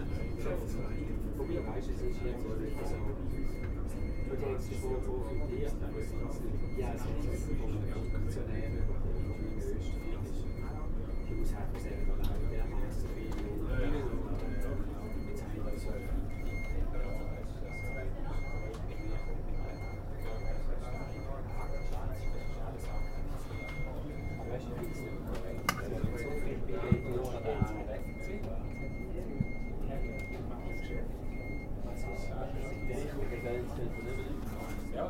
train arriving zurich airport, diner
recorded in restaurant car. background: constant beeping from defective refrigeration. recorded june 8, 2008. - project: "hasenbrot - a private sound diary"